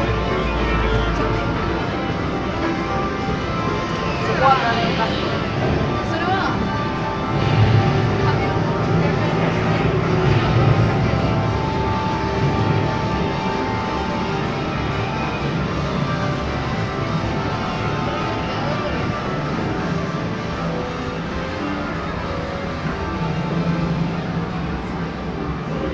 takasaki, store, entrance area
entrance area of a big store for clothings, game and fishing supply. here sounds of several machines for kids to win manga cards and other puppet stuff.
international city scapes - social ambiences and topographic field recordings
22 July 2010, 14:26